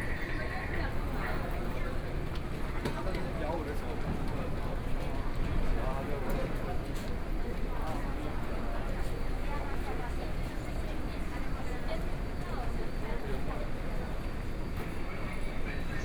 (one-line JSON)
{"title": "Taipei Main Station, Taiwan - Soundwalk", "date": "2013-09-16 16:50:00", "description": "walking in the Platform, From the train station to MRT, Zoom H4n+ Soundman OKM II", "latitude": "25.05", "longitude": "121.52", "altitude": "29", "timezone": "Asia/Taipei"}